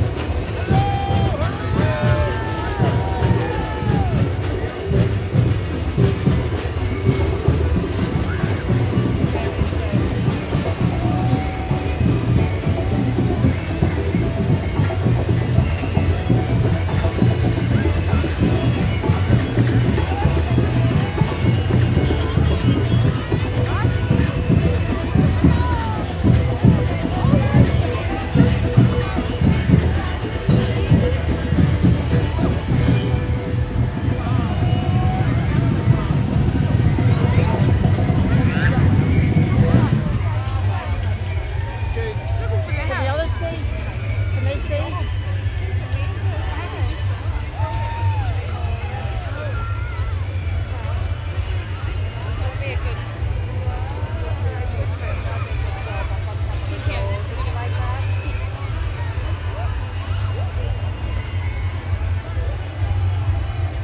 Bridge St, Belfast, UK - Belfast St Patrick's Day
After two years without any St. Patrick's Day parades, the city of Belfast decided to organise a large parade starting from Belfast City Hall and moving through the city's inner streets. On a rainy afternoon, I recorded within the crowd to capture some of the bands, floats, cheers, chatter, and the continuous rain that fell on us. This is a recording of the parade march, parade bands, music, instruments, chanting, yelling, whistles, groups, children, adults, celebrations, chatter, and gatherings.
Ulster, Northern Ireland, United Kingdom